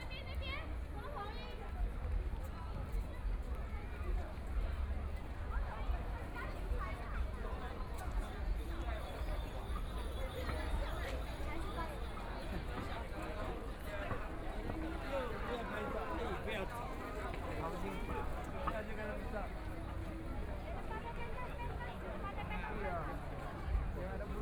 中山美術公園, Taipei City - Walking through the park
First Full Moon Festival, Traffic Sound, A lot of tourists
Please turn up the volume
Binaural recordings, Zoom H4n+ Soundman OKM II
Taipei City, Taiwan, 16 February 2014, 20:59